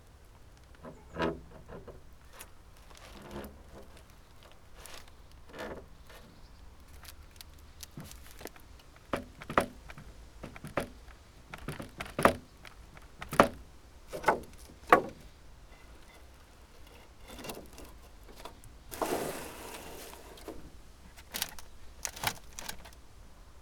Srem, Andrew's house - wood pile
a pile of different wooden planks, boards, rungs, metal rods
12 August 2012, ~10:00